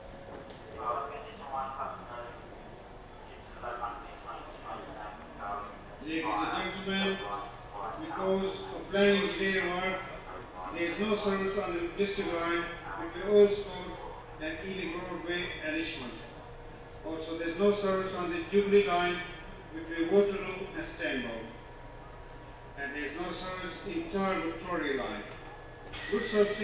London Aldgate East Subway station, waiting for the train
Poplar, Greater London, UK, 2 October 2010, 10:30